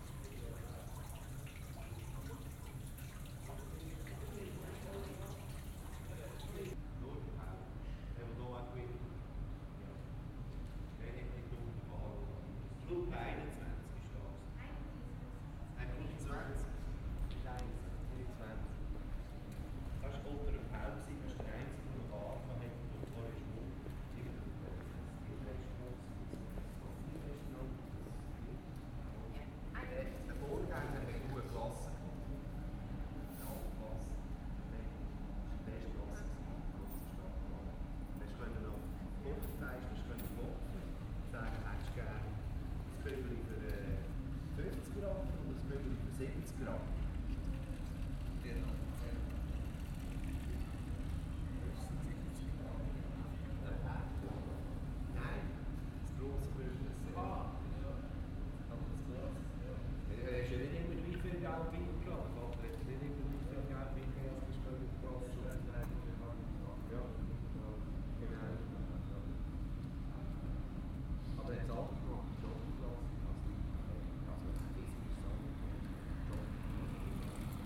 Walk through the nightly streets of the pedestrian zones of Aarau, not very many people left